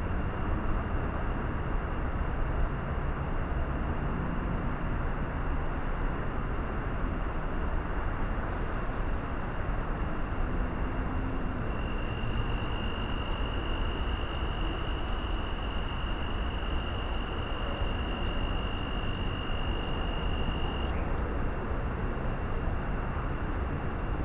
Austin, N Bluff Dr, Insects
USA, Texas, Austin, Road traffic, Insect, Night, Binaural
TX, USA